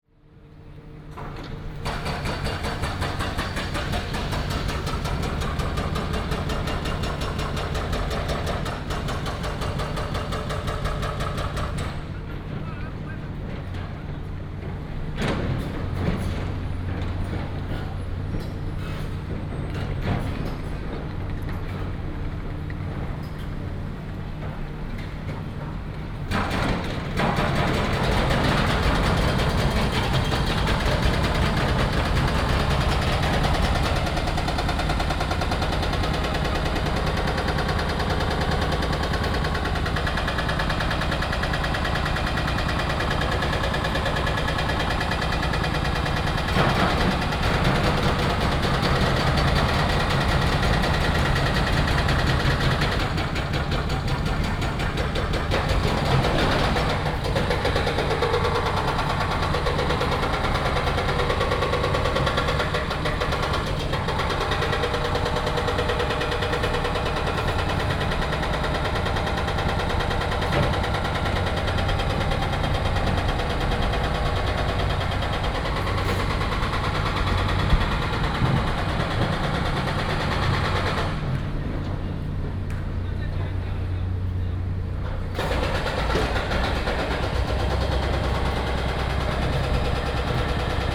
At the station square, Construction sound
Sanmin District, Kaohsiung City, Taiwan, 30 March 2018, ~09:00